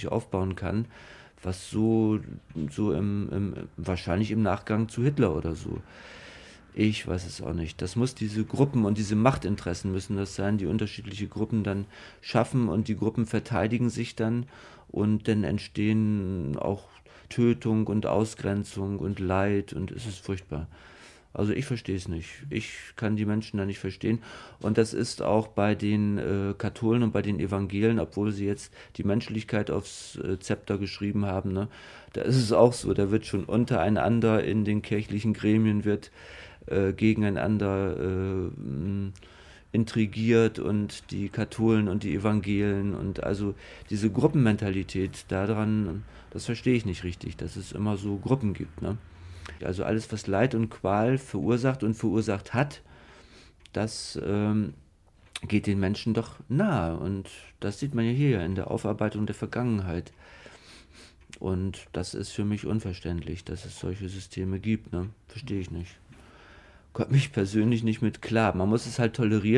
tann - ochsenbaeckerhaus
Produktion: Deutschlandradio Kultur/Norddeutscher Rundfunk 2009